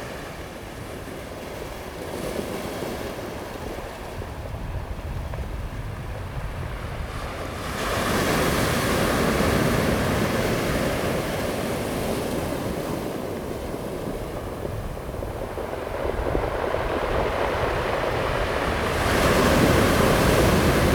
花蓮市, Taiwan - Waves
Waves sound
Zoom H2n MS+XY +Spatial Audio